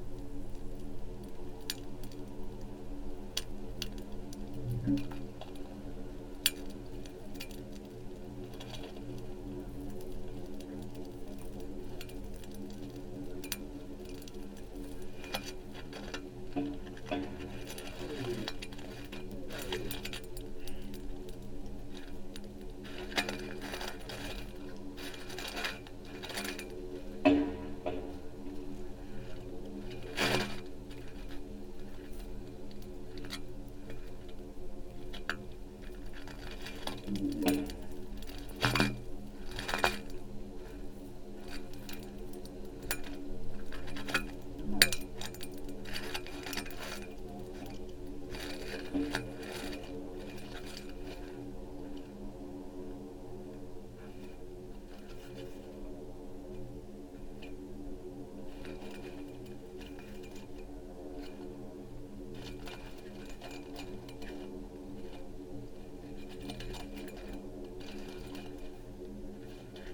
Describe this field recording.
sand and tiny stones, leaves, few words, breath and voices of a borehole